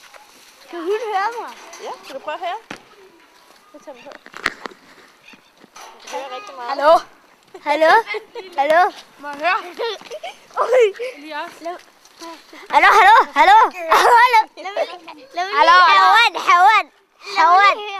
Mjølnerparken block 3, Copenhagen, Children at basket court
Copenhagen, Denmark, 20 March